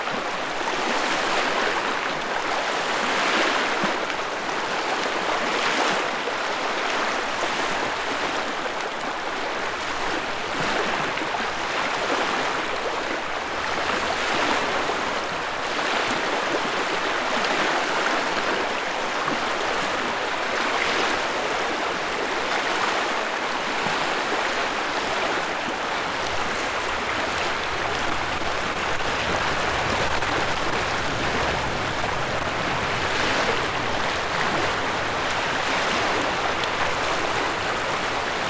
Norrmjöle Klossand. Beach. Seascape.
Seasounds from beach. Rode NT4. (Slight clipping and wind noise)